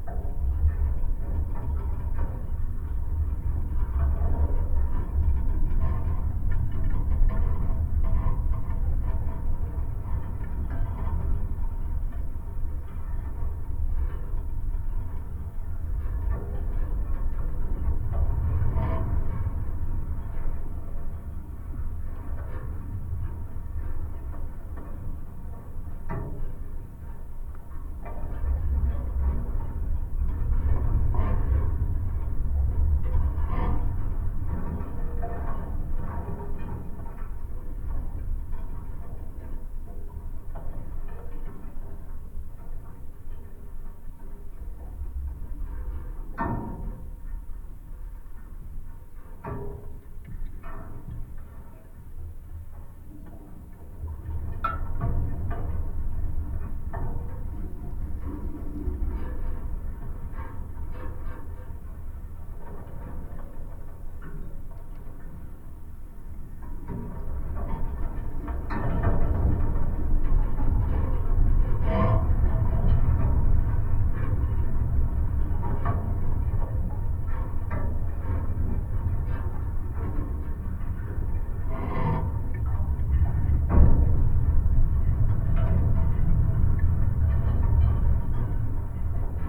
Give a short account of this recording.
Abandoned farm complex from soviet "kolchoz" times. Some fence gates recorded with a pair of contact mics and geophone